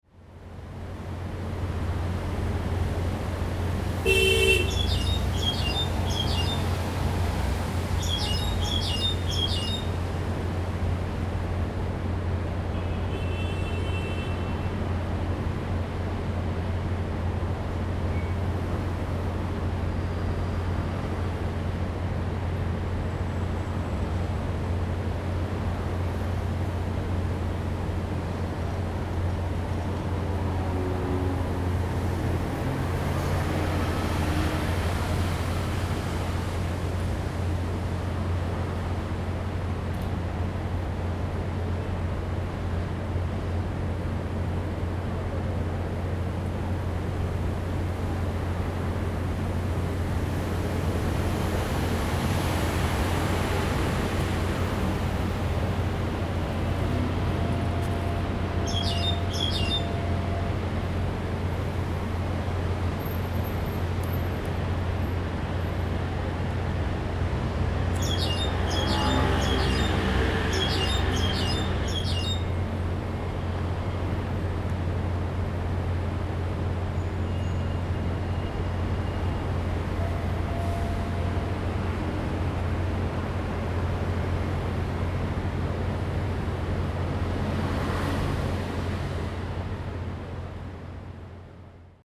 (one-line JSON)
{"title": "Civic Boulevard, Taipei City - Noise and the sound of birds", "date": "2015-01-27 14:02:00", "description": "Noise and the sound of birds\nZoom H2n MS +XY", "latitude": "25.05", "longitude": "121.52", "altitude": "4", "timezone": "Asia/Taipei"}